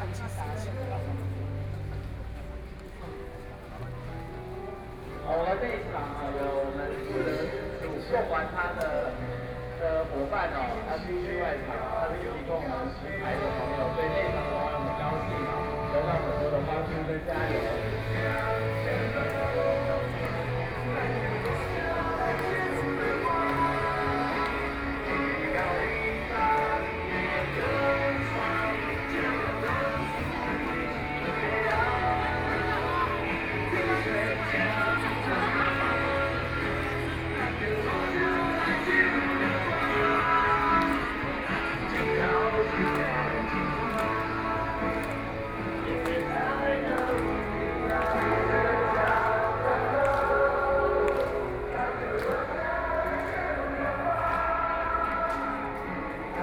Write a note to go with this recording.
Walking through the site in protest, People and students occupied the Legislature Yuan（Occupied Parliament）